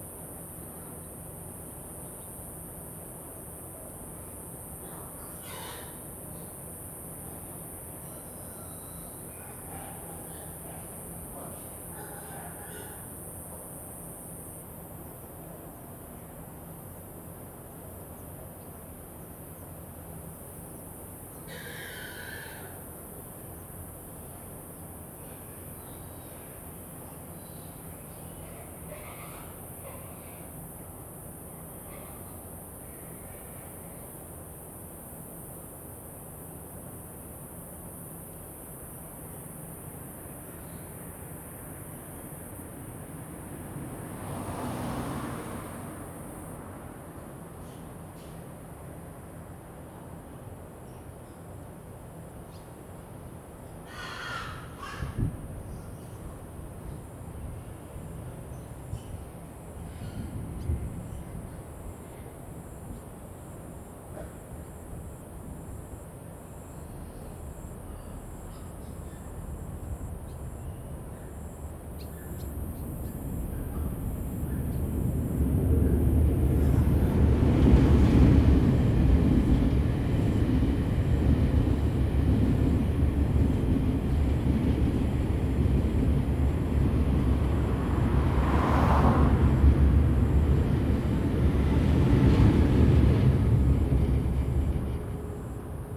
源城里, Yuli Township - under the railroad tracks
under the railroad tracks, Next to a pig farm, Traffic Sound, Train traveling through
Zoom H2n MS +XY
Hualien County, Taiwan, 7 September 2014